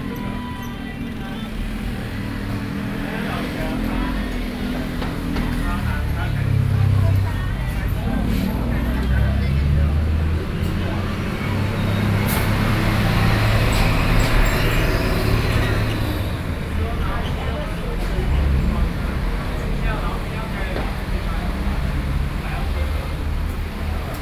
Yangmei - Crossroads
Beverage shop, in front of the intersection, Traffic Noise, Sony PCM D50 + Soundman OKM II